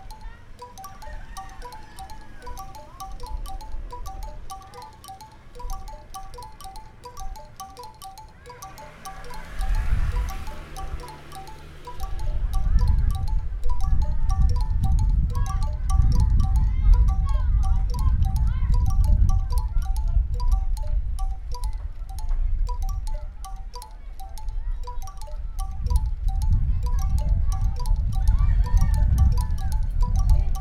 Jl. Silayukti, Padangbai, Kec. Manggis, Kabupaten Karangasem, Bali, Indonésie - A singing weathervane
A recording of a weathervane producing a continuous repetitive melody on the main street in the Padangbai harbour. Gusts of wind & motorbike traffic.